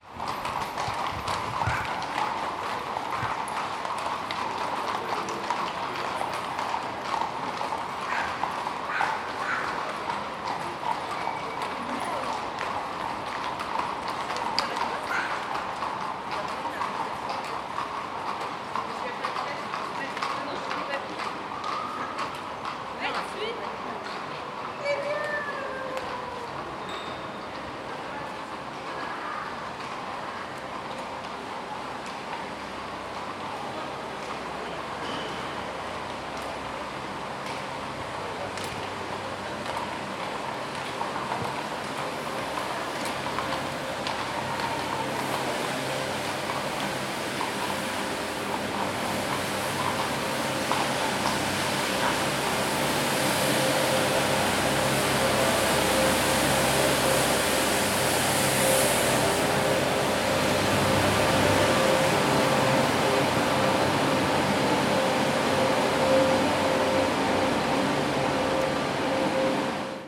{"title": "Peterspl., Wien, Österreich - horse-drawn carriages", "date": "2021-10-31 16:26:00", "description": "horse-drawn carriages followed by a street cleaning vehicle", "latitude": "48.21", "longitude": "16.37", "altitude": "191", "timezone": "Europe/Vienna"}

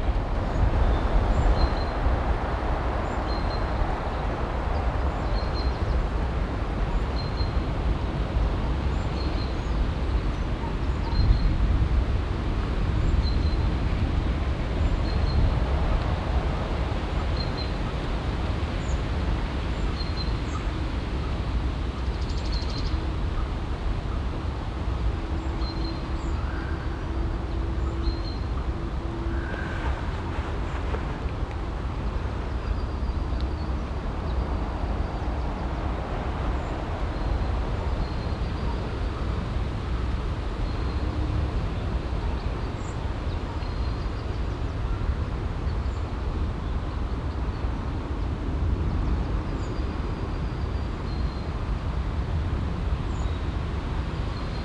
{"title": "heiligenhaus, am steinbruch, tannenwind", "date": "2008-07-06 12:50:00", "description": "wind in grosser tanne, im hintergrund vorbeifahrt der kalkbahn, abends\nproject: :resonanzen - neanderland - soundmap nrw: social ambiences/ listen to the people - in & outdoor nearfield recordings, listen to the people", "latitude": "51.31", "longitude": "6.95", "altitude": "116", "timezone": "Europe/Berlin"}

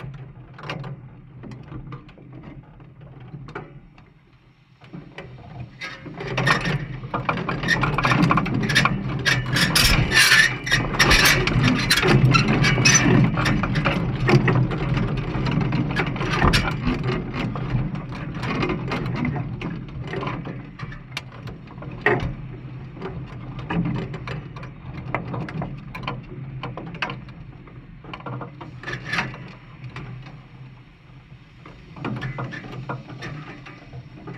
{"title": "Gėlių g., Ringaudai, Lithuania - Marketplace tent metal frame sounds", "date": "2021-04-15 20:00:00", "description": "Quadruple contact microphone recording of a metal frame of a tent. Blowing wind forces the metal construction to crack and clank in complex and interesting ways. A little bit of distant traffic hum is also resonating here and there throughout the recording. Recorded with ZOOM H5.", "latitude": "54.89", "longitude": "23.80", "altitude": "82", "timezone": "Europe/Vilnius"}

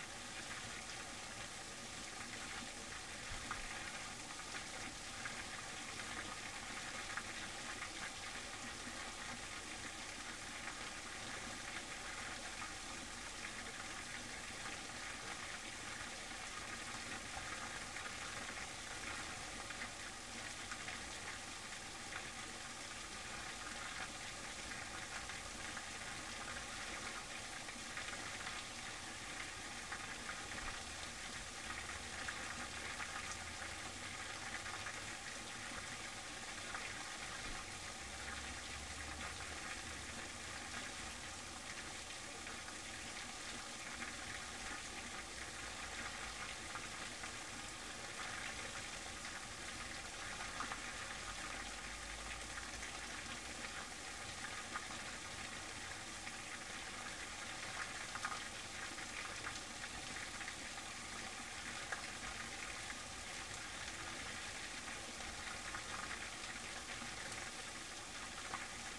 {"title": "Panemune, Lithuania, little dam", "date": "2014-10-04 16:20:00", "description": "interesting sound of falling water in a small dam", "latitude": "55.10", "longitude": "22.99", "altitude": "26", "timezone": "Europe/Vilnius"}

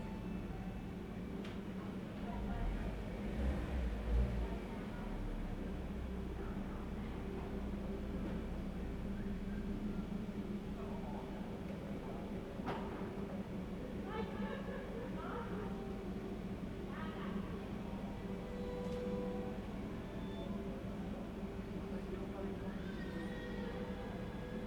{"title": "Ascolto il tuo cuore, città. I listen to your heart, city. Several chapters **SCROLL DOWN FOR ALL RECORDINGS** - Terrace at sunset with string quartet in background in the time of COVID19 Soundscape", "date": "2020-04-07 19:46:00", "description": "\"Terrace_at_sunset_wth string quartet in background in the time of COVID19\" Soundscape\nChapter XXXVI of Ascolto il tuo cuore, città. I listen to your heart, city\nTuesday April 7th 2020. Fixed position on an internal terrace at San Salvario district Turin, three weeks after emergency disposition due to the epidemic of COVID19.\nStart at 7:46 p.m. end at 8:21 p.m. duration of recording 34'43'', sunset time at 8:04.", "latitude": "45.06", "longitude": "7.69", "altitude": "245", "timezone": "Europe/Rome"}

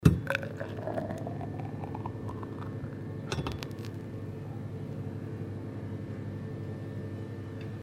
{"date": "2011-09-12 18:11:00", "description": "In the restaurant of the former farm house. The sound of tapping a beer of the house brewery accompanied by the sonorous hum of the beer pump.\nHeinerscheid, Cornelyshaff, Restaurant\nIm Restaurant des ehemaligen Bauernhofes. Das Geräusch von Zapfen eines Bieres aus der Hausbrauerei zusammen mit dem sonoren Brummen der Bierpumpe.\nHeinerscheid, Cornelyshaff, restaurant\nDans le restaurant de l’ancien bâtiment de ferme. Le bruit d’une bière maison que l’on verse accompagné du bourdonnement de la pompe à pression.", "latitude": "50.10", "longitude": "6.09", "altitude": "526", "timezone": "Europe/Luxembourg"}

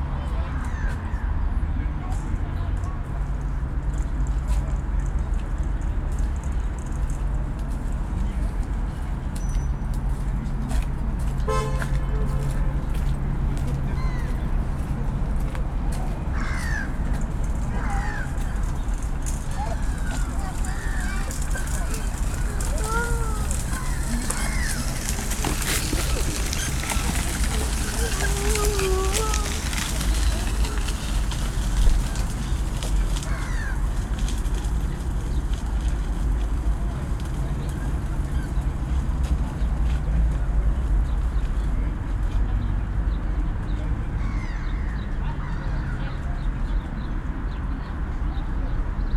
{"title": "Michaelkirchpl., Berlin, Deutschland - Engeldamm Legiendamm", "date": "2020-11-28 14:21:00", "description": "Engeldamm_Legiendamm\nRecording position is the first park bench if you take the entrance Engeldamm and Legiendamm.", "latitude": "52.51", "longitude": "13.42", "altitude": "38", "timezone": "Europe/Berlin"}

